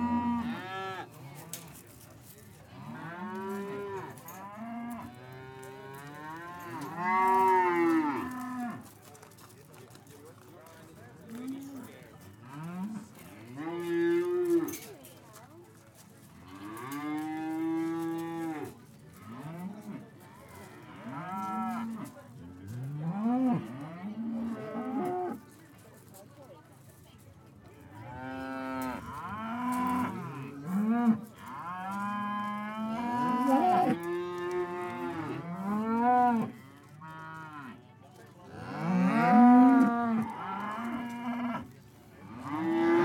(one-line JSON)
{"title": "Voe & District Agricultural Show, Voe, Shetland Islands, UK - Cows at the Voe Show", "date": "2013-03-08 13:06:00", "description": "This is the sound of cattle (especially prize-winning bulls) in their pens at the Voe & District Agricultural Show in Shetland. All of the bulls you can hear are from the local area, and many of them have rosettes. I have a feeling - if my memory is right - that the noisiest of the bulls was actually a wee Shetland bull. The Shetland cattle are comparatively small in stature, but make up for this I reckon in noise. Recorded with Naiant X-X microphones and FOSTEX FR-2LE.", "latitude": "60.36", "longitude": "-1.26", "altitude": "77", "timezone": "Europe/London"}